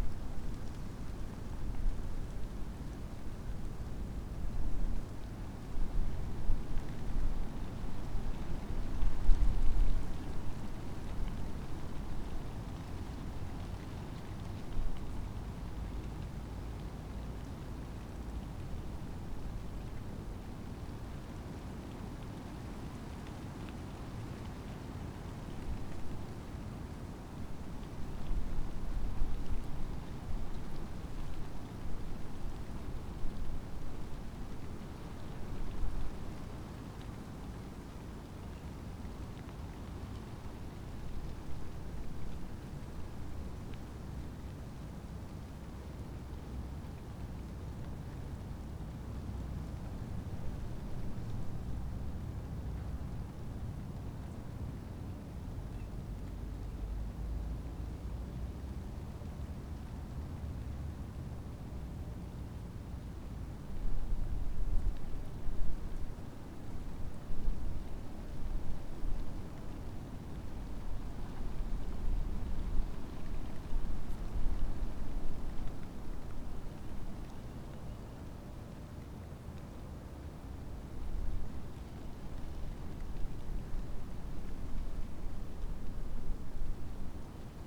penkun: cemetery - the city, the country & me: tree with ivy growing up the trunk
ivy leaves fluttering in the wind
the city, the country & me: january 3, 2014
Penkun, Germany, 3 January 2014